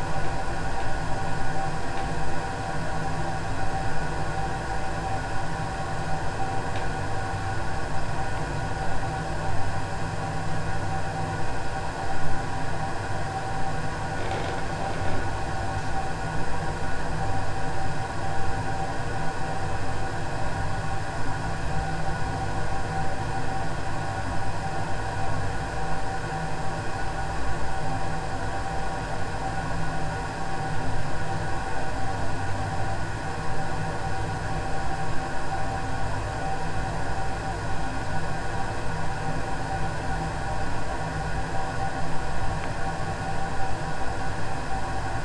poznan, windy hill district, apartment - radiator
water flowing in the pipe feeding the radiator. two superlux cardioid mics pushed against the pipe.
Poznań, Poland, 4 February 2012